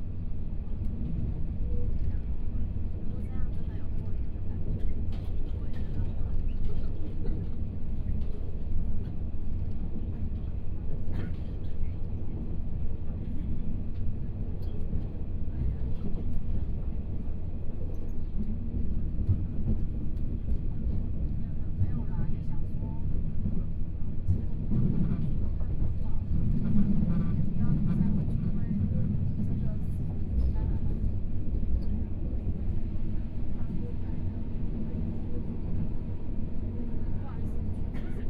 Ji'an Township, Hualien County - Accident
This paragraph recording process, Train butt process occurs, Train Parking, Binaural recordings, Zoom H4n+ Soundman OKM II